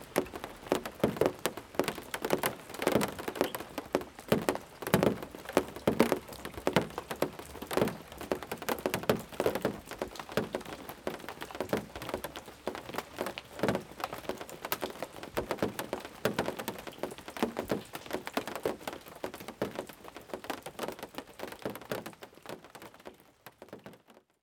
Weipertshausener Straße, Münsing, Deutschland - Rain in the backyard, Muensing - dripping on engine hood
Rain in the backyard, Muensing - dripping on engine hood [I used the Hi-MD-recorder Sony MZ-NH900 with external microphone Beyerdynamic MCE 82]